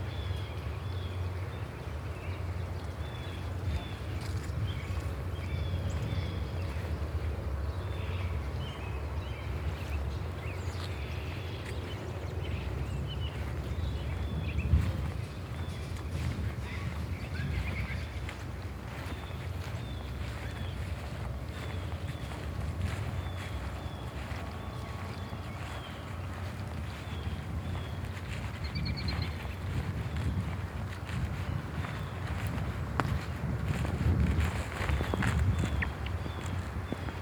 Snug Harbor
park, interior of tower